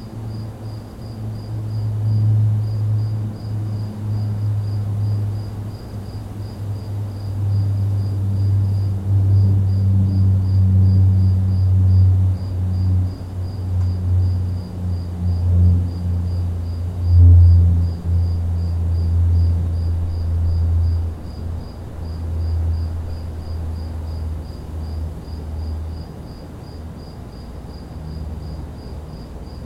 Woodbine Ave, East York, ON, Canada - Crickets, late evening.
Common field crickets, with a brief intervention from a passing aeroplane.
29 August 2022, 22:30